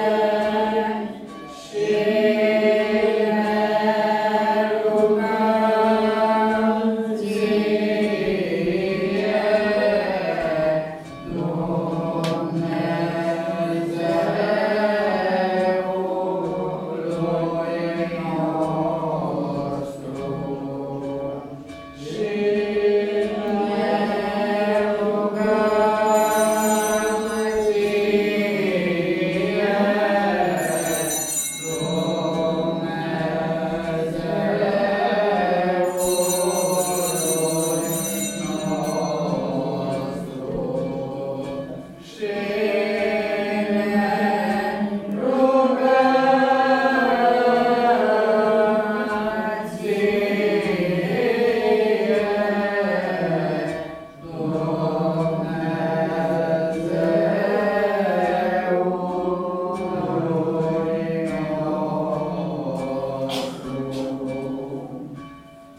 Cârțișoara, Romania - Sunday Mass
Sunday Mass at an Orthodox church in a small village. Recording made with a Zoom h2n.